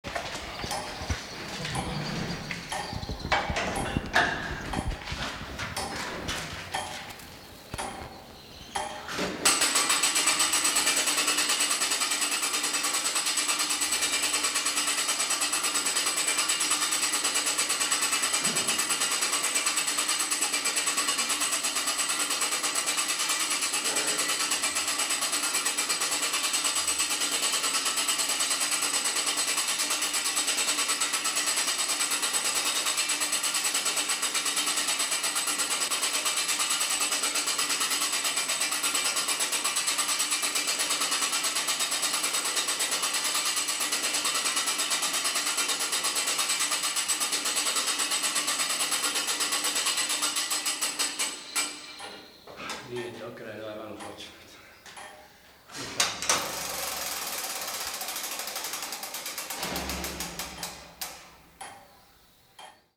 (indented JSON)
{
  "title": "Dubrovnik, July 1992, the city tower clock - winding up",
  "date": "1992-07-13 09:20:00",
  "description": "two big wheels turned around manually, 100x each every second day; an old man did it regularly during months of shelling",
  "latitude": "42.64",
  "longitude": "18.11",
  "altitude": "8",
  "timezone": "Europe/Zagreb"
}